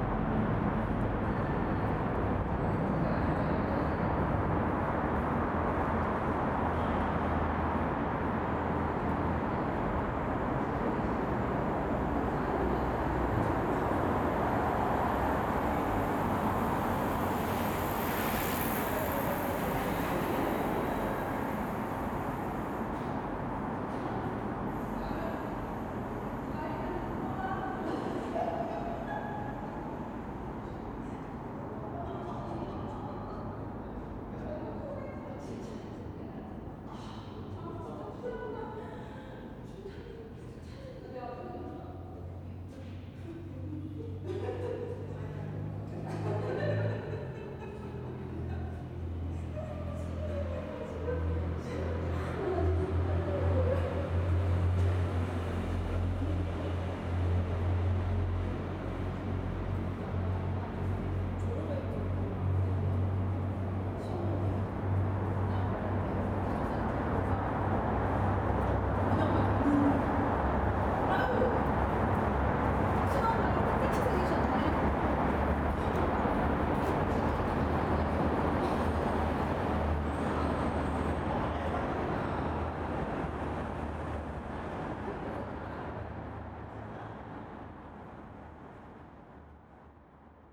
대한민국 서울특별시 서초구 방배4동 882-36 - Seoripul Tunnel
Bangbae-dong, Seoripul Tunnel.
서리풀 터널